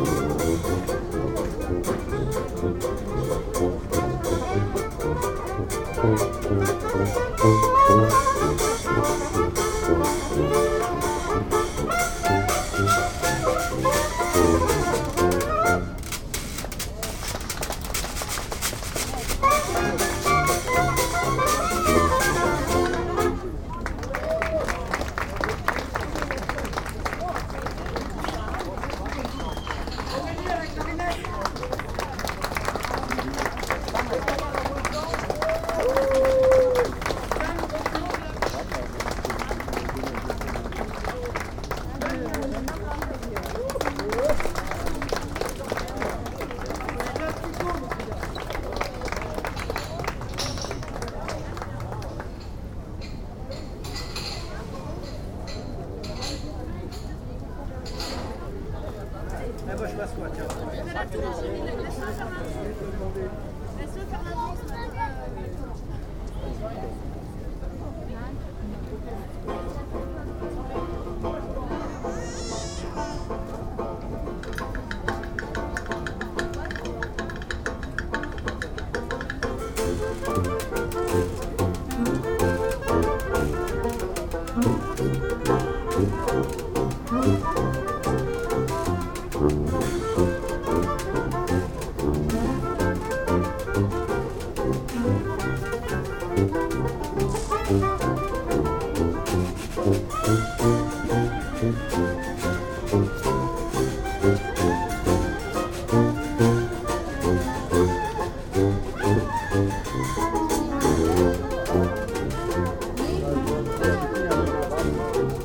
Juan-les-Pins, Antibes, France - Old time band
On the boulevard where we were drinking Mojitos in the warm evening sunshine, a band of musicians turned up consisting of jazz saxophonist; clarinetist; tuba-player; banjo player and washboard aficionado. What a wonderful sound! I wandered over to check out their music, and you can hear the outdoor setting; many folks gathered around to hear the joyful music, a little bit of traffic, and the chatter of an informal gathering. After listening to this I went home and started searching on ebay for old washboards and thimbles...